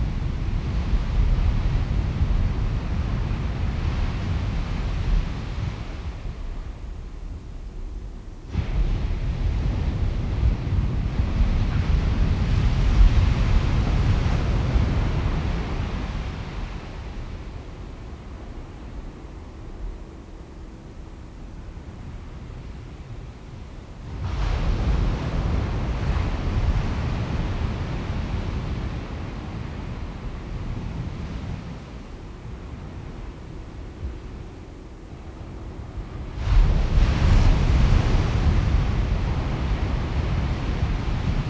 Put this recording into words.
Recorded with a pair of DPA 4060s and a Marantz PDM661